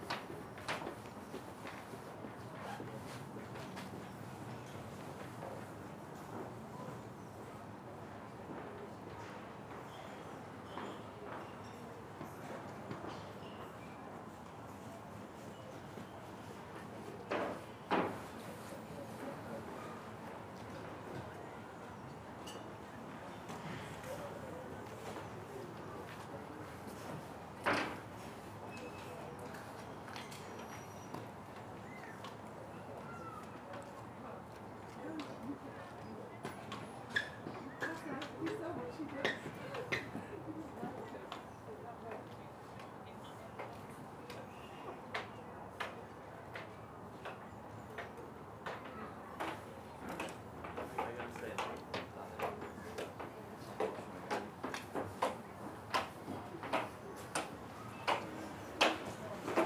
{"title": "Yorkshire Sculpture Park, West Bretton, UK - Entrance to the Yorkshire Sculpture Park", "date": "2015-02-01 14:38:00", "description": "Sitting above the entrance to the Sculpture Park, you can hear some strange metallic sounds as people walk over the metal grating that covers the path.\nRecorded on zoom H4n\nUsed audacity's low-pass filter at 100Hz to reduce wind noise.", "latitude": "53.61", "longitude": "-1.57", "altitude": "134", "timezone": "Europe/London"}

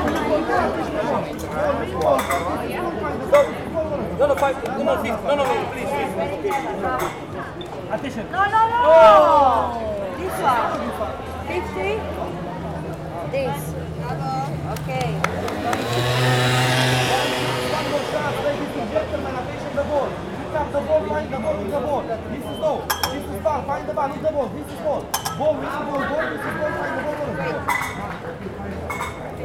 {"title": "Montmartre, Paris, France - The Shell Game, Paris", "date": "2016-08-01 18:30:00", "description": "Sounds from the \"shell game\" near Sacre Coeur.\nZoom H4n", "latitude": "48.88", "longitude": "2.34", "altitude": "81", "timezone": "Europe/Paris"}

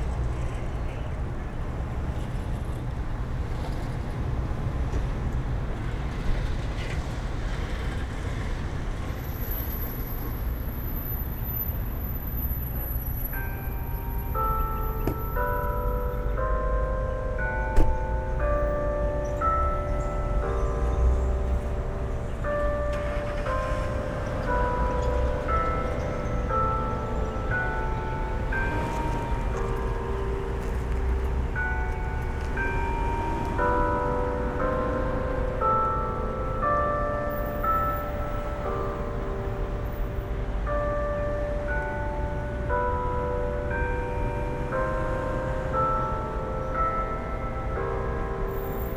{"title": "Bells: St. Anne's Catholic Church, Houston, Texas - St. Anne's Bells at 4pm", "date": "2013-01-12 15:15:00", "description": "Binaural: Heard the bells at 3pm, ate a gut busting meal of Mexican food across the street then set up to record them as they chimed for 4. Restaurant employee carting a trash can on a dolly, plus cars bells and birds.\nCA14 omnis > DR100 MK2", "latitude": "29.74", "longitude": "-95.41", "altitude": "21", "timezone": "America/Chicago"}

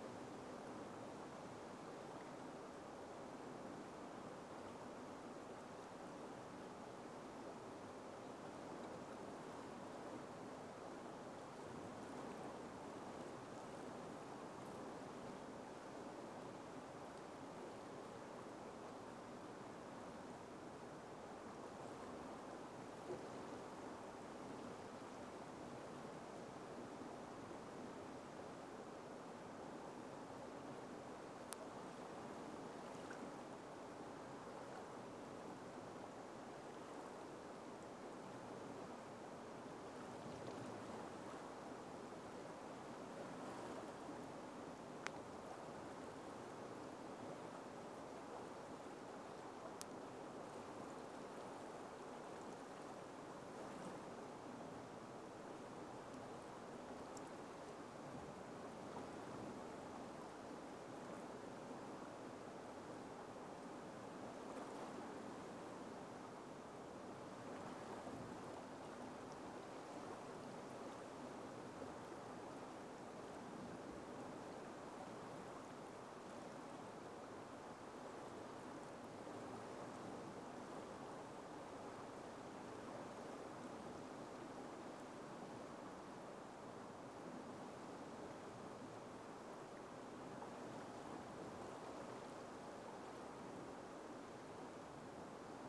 {"title": "Bywell Bridge, Stocksfield, UK - River Tyne Under Bywell Bridge, Northumberland", "date": "2016-11-12 14:18:00", "description": "River Tyne as it flows under the bridge at Bywell. Occasional sound of water dripping from the arch of the bridge on to the recorder. Recorder used was a hand-held Tascam DR-05.", "latitude": "54.95", "longitude": "-1.92", "altitude": "20", "timezone": "Europe/London"}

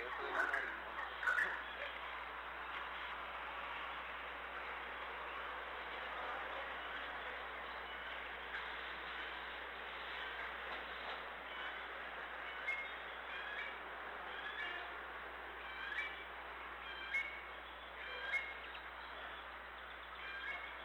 {"title": "Puerto Colombia, Atlántico, Colombia - Universidad del atlantico 7 am", "date": "2011-01-21 07:07:00", "description": "Recorded close to the drawing room.", "latitude": "11.02", "longitude": "-74.87", "altitude": "23", "timezone": "America/Bogota"}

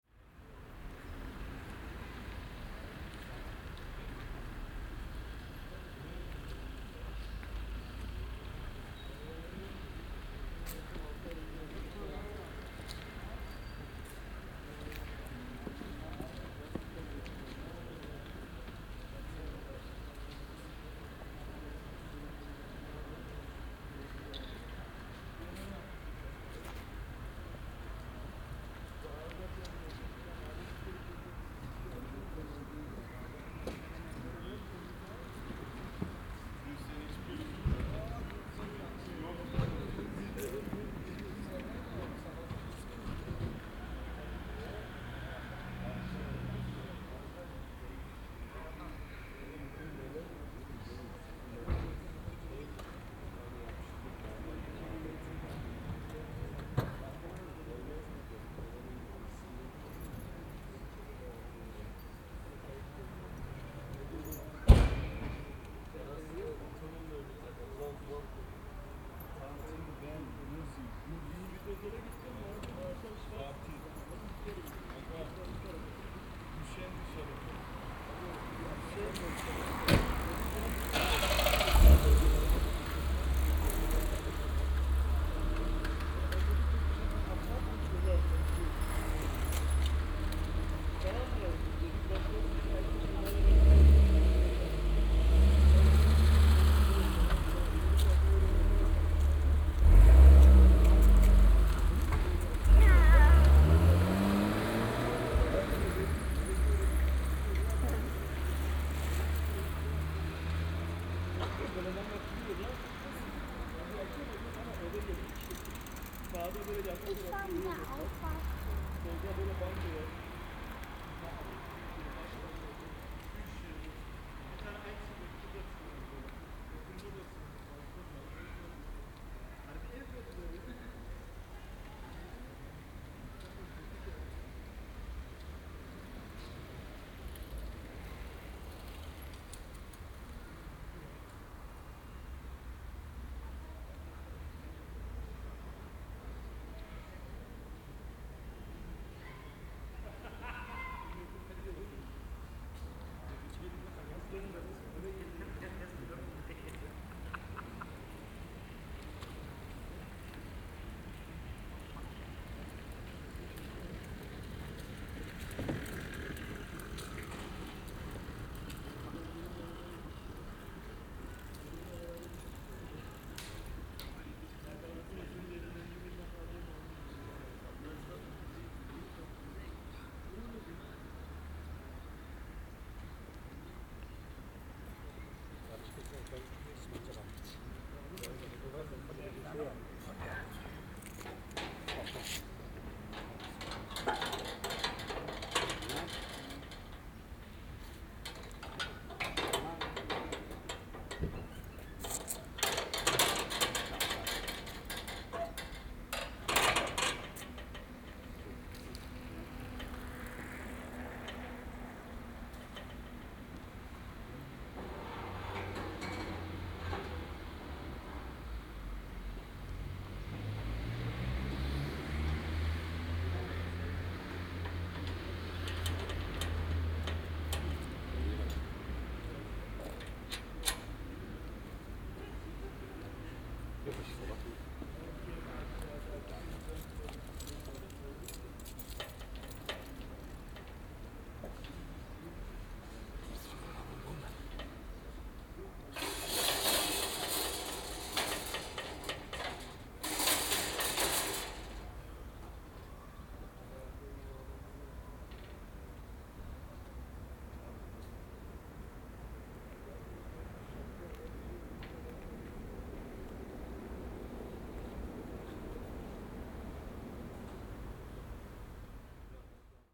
{"title": "Dresdener Str., Sebastianstr. - street soundscape", "date": "2009-08-22 17:50:00", "description": "this part of the street is closed for traffic, except bikes. teenagers hang out here sometimes. besides the street, there's a hidden entry into the subway.\nwhile recording, two men opened this entry and went down...", "latitude": "52.50", "longitude": "13.41", "altitude": "37", "timezone": "Europe/Berlin"}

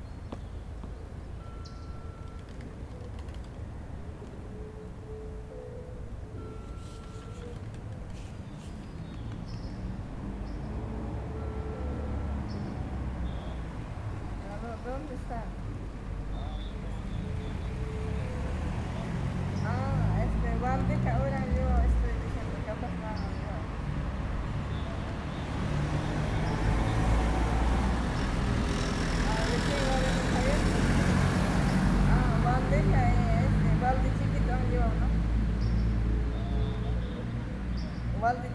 {"title": "San Martin, Tacna, Peru - No Church bells", "date": "2018-01-07 05:51:00", "description": "Crossing the border between Chile and Peru by night, arriving early in Tacna. Passing my time at the square in front of the church, recording the morning - a city waking up.", "latitude": "-18.01", "longitude": "-70.25", "altitude": "575", "timezone": "GMT+1"}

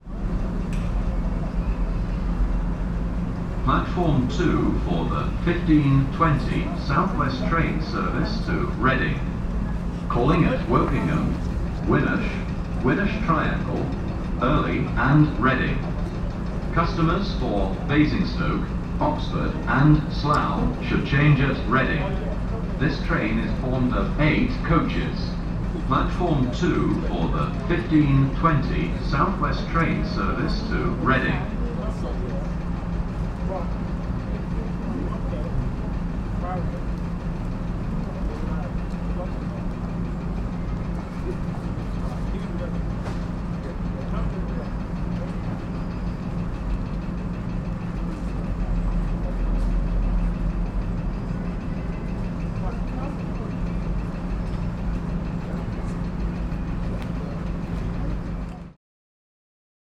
{"title": "Station House, Market St, Bracknell, UK - Platform 2", "date": "2017-06-20 16:01:00", "description": "Bracknell Train station announcement. Recorder: Sony PCM-10", "latitude": "51.41", "longitude": "-0.75", "altitude": "76", "timezone": "Europe/London"}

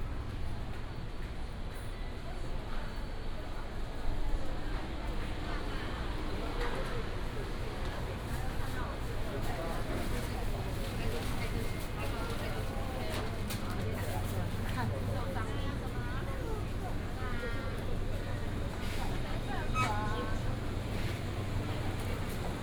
{"title": "南門市場, Taipei City - Walking in the market", "date": "2017-04-28 14:39:00", "description": "Walking in the market, traffic sound", "latitude": "25.03", "longitude": "121.52", "altitude": "19", "timezone": "Asia/Taipei"}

